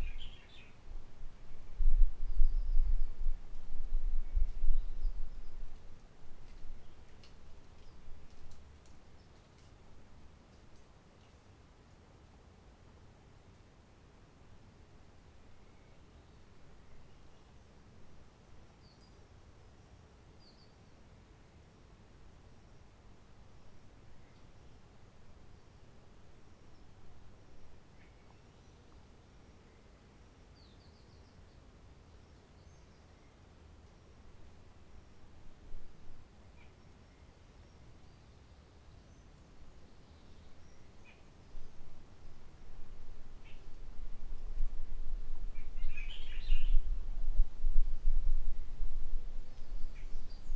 Forêt de la Roche Merveilleuse, Réunion - 20181205 120044 lg78rvsa0466 ambiance sonore CILAOS MATARUM
04:56 tec tec mouche, merle-maurice (loin)
08:20 passage hélicoptère de type robinson, exemple à isoler et à mettre à part dans les exemple de nuisances anormales.
les oiseaux chantent plus fort sur le moment mais ensuite s'arrêtent
13:28 nouveau passage hélicoptère
24:08 hélico plus loin
Il s'agit d'un petit robinson de couleur blanche.
28:39 retour calme.
Cet enregistrement est l'occasion de mesurer l'émergence acoustique
5 December 2018